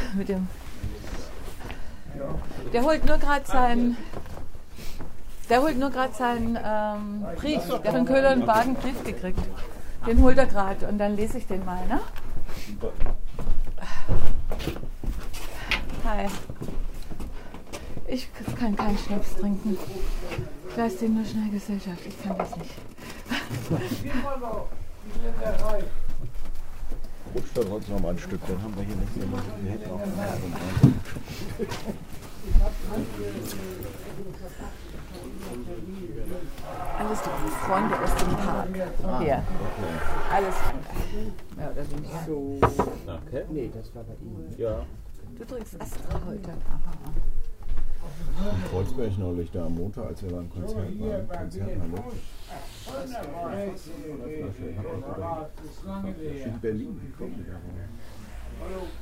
Aus der Serie "Immobilien & Verbrechen". Schnaps, Gespräche und Post vom Investor.
Keywords: Gentrifizierung, St. Pauli, Köhler & von Bargen, NoBNQ - Kein Bernhard Nocht Quartier
Hamburg, Germany, October 2009